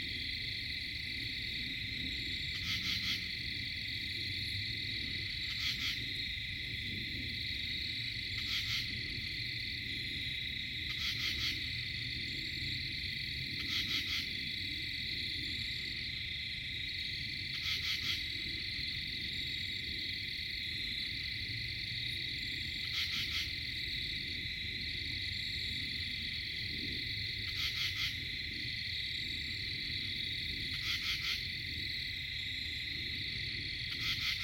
Quaker Bridge Road, NJ, USA - midnight in the pines
Tucked off of Quaker Bridge Road in the pine barrens of Wharton State Forest. Mostly hypnotic insect chatter and long-ranged traffic noise. A screech owl haunts late in the recording. One of many solo nights spent deep in the forest, simply listening. Fostex FR2-LE; AT3032)